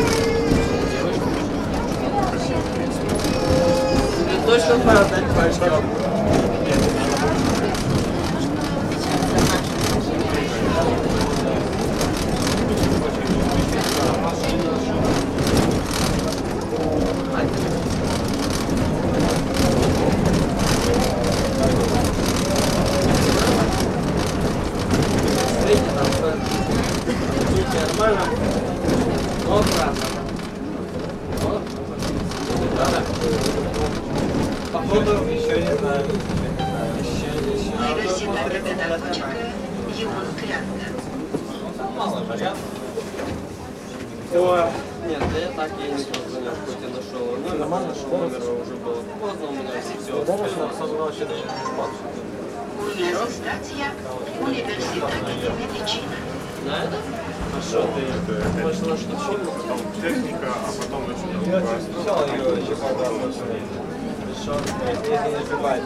Sectorul Buiucani, Chisinau, Moldova - Trolleybus ride
Taking a trolleybus from Strada Alba Iulia to Bulevardul Ștefan cel Mare in the center of Chișinău.